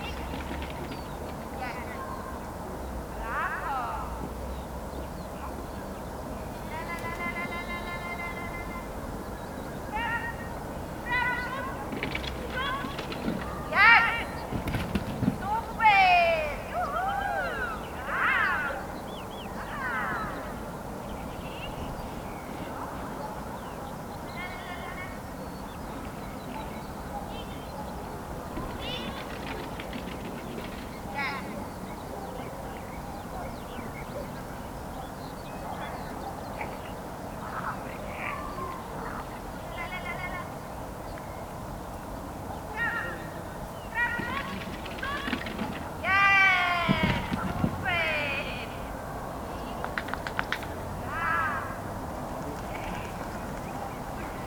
Poznan, Nad Rozanym Potokiem - dog training
a girl training her dog on an obstacle course. the area is located among garages so her enthusiastic shouts get reverberated of nearby walls. (roland r-07)
Poznań, Poland, 24 March 2019, ~15:00